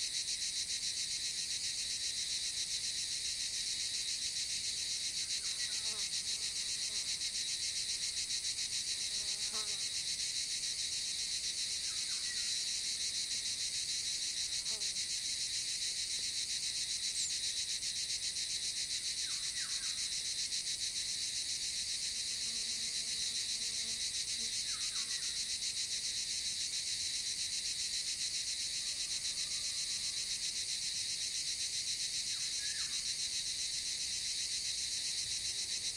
Unnamed Road, Piedralaves, Ávila, España - Chicharras y Oropéndola Europea
Grabando por la zona... escuché un ave que resultó ser Oropéndola Europea. Me pareció un sonido muy bonito y aunque había muchas chicharras... la Oropéndola se hacía oír entre el bosque.